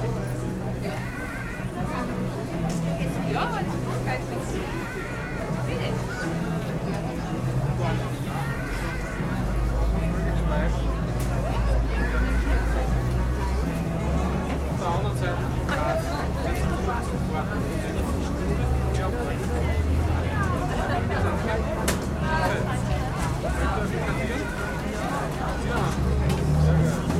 graz iv. - markt am lendplatz
markt am lendplatz